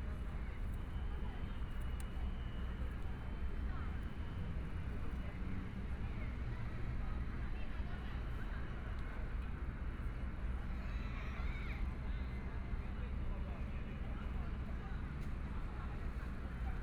Xinsheng Park - Taipei EXPO Park - in the Park
in the park, Traffic Sound, Aircraft flying through, Jogging game, Binaural recordings, ( Keep the volume slightly larger opening )Zoom H4n+ Soundman OKM II
Zhongshan District, (松江路 民族東路 建國北路), 15 February 2014